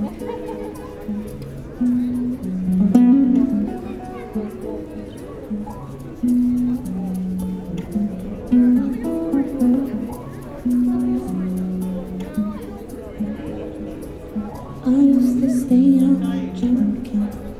Girl Busker, Worcester, UK - Girl Busker
An unknown girl busker on the High Street, a popular spot for street artists. MixPre 6 II 2 x Sennheiser MKH 8020s + Rode NTG3